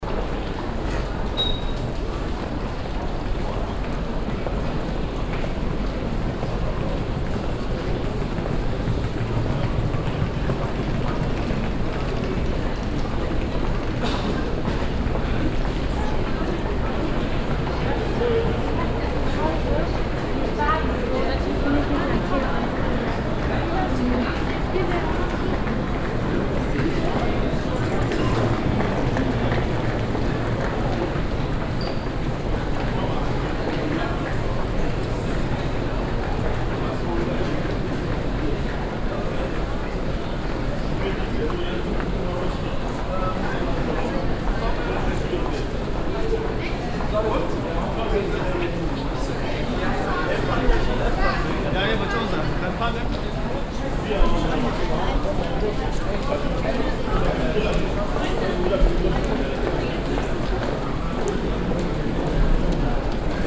Düsseldorf, HBF, Haupteingangshalle - düsseldorf, hbf, haupteingangshalle
At the main entrance of the main station.
soundmap nrw: social ambiences/ listen to the people - in & outdoor nearfield recordings